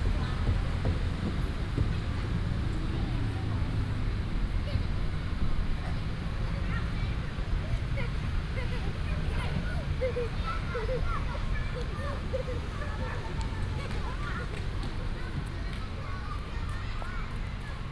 北屯公園, Beitun Dist., Taichung City - Walking in the Park
Walking in the Park, traffic sound, Childrens play area, Binaural recordings, Sony PCM D100+ Soundman OKM II
Beitun District, Taichung City, Taiwan